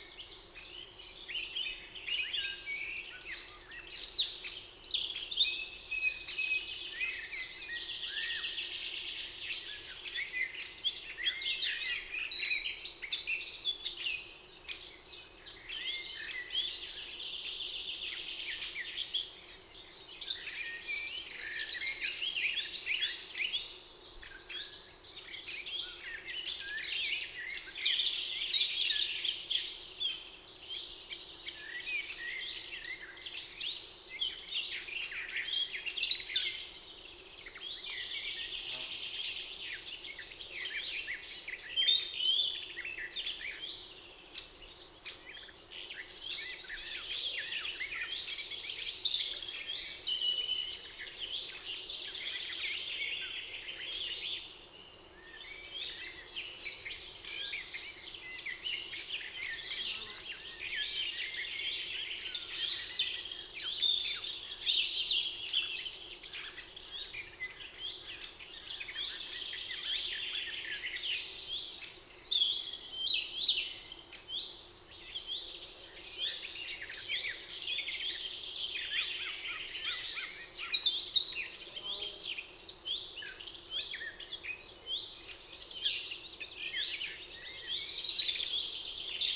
Court-St.-Étienne, Belgique - The forest
Recording of the birds in the forest. About the birds, I listed, with french name and english name :
Rouge-gorge - Common robin
Merle noir - Common blackbird
Pouillot véloce - Common chiffchaff
Pigeon ramier - Common Wood Pigeon
Mésange bleue - Eurasian Blue Tit
Mésange charbonnière - Great Tit
Corneille noire - Carrion Crow
Faisan - Common Pheasant
Pic Epeiche - Great Spotted Woodpecker
Fauvette à tête noire - Eurasian Blackcap
And again very much painful planes.
Court-St.-Étienne, Belgium, 18 May 2017